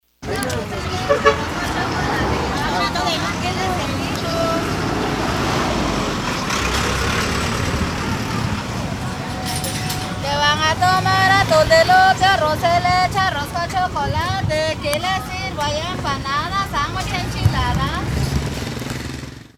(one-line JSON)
{"title": "Parque Benito Juárez", "date": "2010-07-10 18:06:00", "description": "Street food vendors", "latitude": "14.84", "longitude": "-91.52", "altitude": "2363", "timezone": "America/Guatemala"}